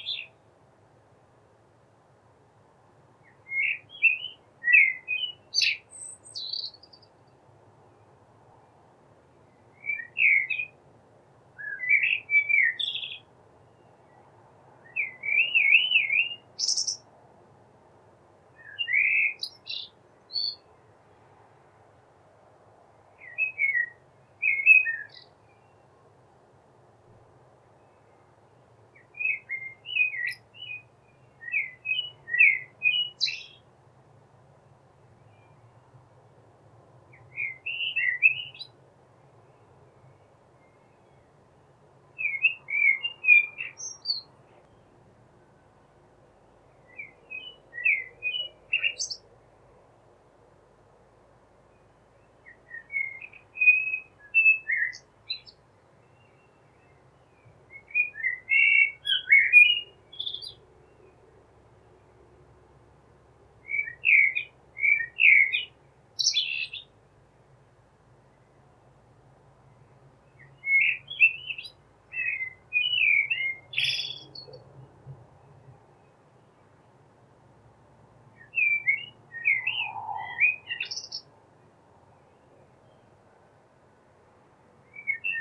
Lambert St, Skipton, UK - Hr Sort
Hr Sort, aka Mr Black, is a very common nick name for the local blackbird in Denmark.